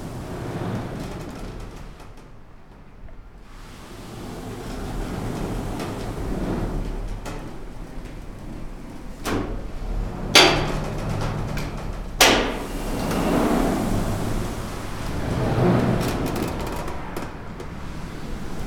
1, place des rencontres 26500 bourg-les-valence
Bourg-lès-Valence, France